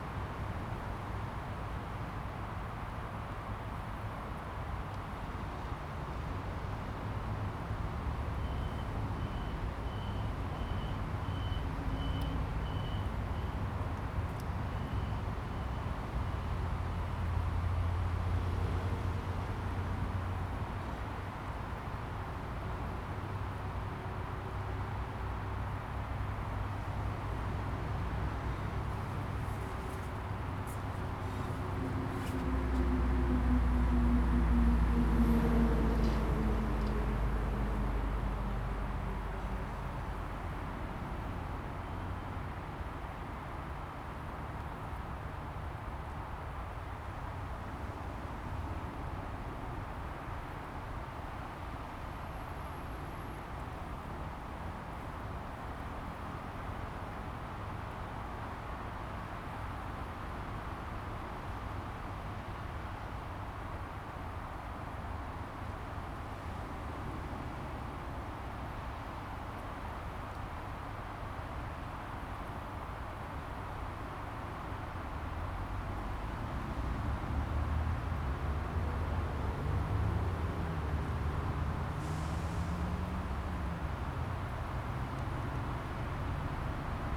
喜南里, South Dist., Tainan City - Windbreak forest
Windbreak forest, Traffic sound, Casuarina equisetifolia
Zoom H2n MS+ XY